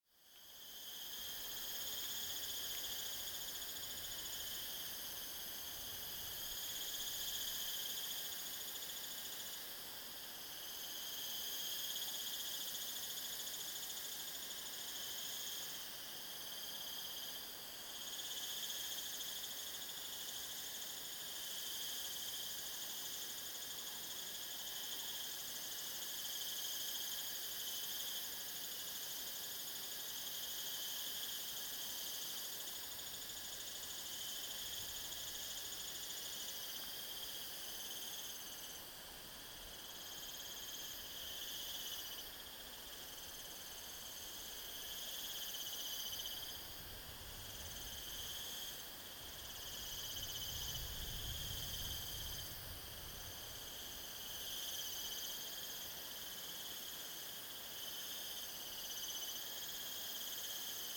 {"title": "東68紹雅產業道路, Xinxing, Daren Township - the sound of cicadas", "date": "2018-04-13 15:32:00", "description": "In the Mountain trail, traffic sound, Bird call, Stream sound, The sound of cicadas\nZoom H2n MS+XY", "latitude": "22.44", "longitude": "120.88", "altitude": "300", "timezone": "Asia/Taipei"}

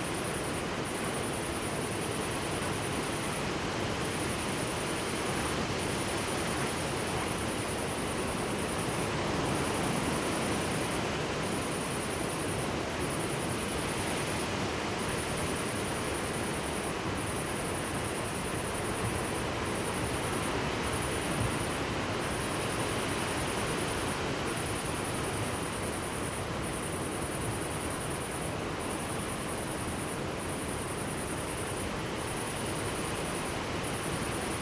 {"title": "Saint-Georges-d'Oléron, Frankrijk - wind in tent", "date": "2013-08-15 15:00:00", "description": "a rare silent moment at a camping\ninside our tent listening to the wind", "latitude": "45.99", "longitude": "-1.38", "altitude": "8", "timezone": "Europe/Paris"}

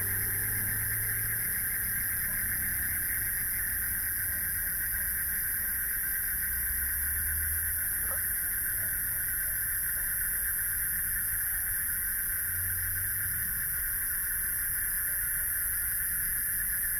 {"title": "北投區關渡里, Taipei City - Frogs sound", "date": "2014-03-18 19:33:00", "description": "Frogs sound, Traffic Sound, Environmental Noise\nBinaural recordings\nSony PCM D100+ Soundman OKM II + Zoom H6 MS", "latitude": "25.12", "longitude": "121.47", "timezone": "Asia/Taipei"}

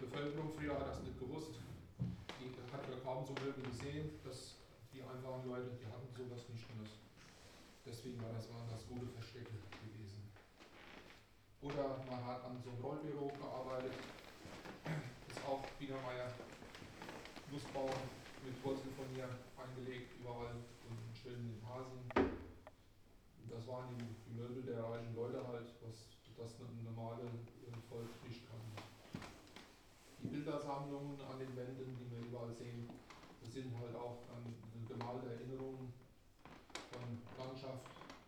{"title": "niederheimbach: burg sooneck - sooneck castle tour 3", "date": "2010-10-17 16:25:00", "description": "guided tour through sooneck castle (3), visitors on the spiral stairs to the first floor, different rooms, guide continues the tour\nthe city, the country & me: october 17, 2010", "latitude": "50.02", "longitude": "7.82", "altitude": "203", "timezone": "Europe/Berlin"}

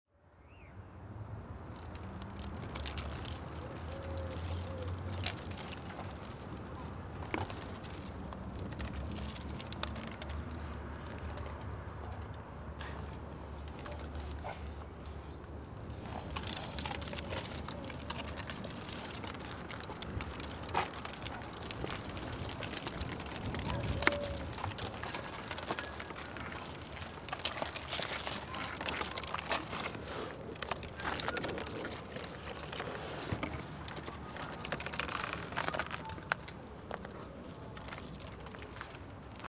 {"title": "Cour, collège de Saint-Estève, Pyrénées-Orientales, France - Cour, petit tourbillon de vent", "date": "2011-02-17 15:00:00", "description": "Dans la cour.\nLe vent crée un petit tourbillon et fait voler des papiers.\nPreneur de son : Allan.", "latitude": "42.71", "longitude": "2.84", "timezone": "Europe/Paris"}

